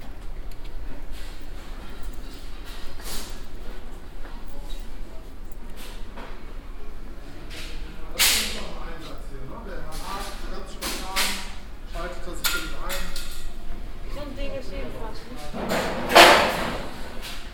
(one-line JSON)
{"title": "bensberg, overather straße, construction market, metal department", "date": "2009-07-06 01:53:00", "description": "soundmap nrw: social ambiences/ listen to the people in & outdoor topographic field recordings", "latitude": "50.96", "longitude": "7.19", "altitude": "178", "timezone": "Europe/Berlin"}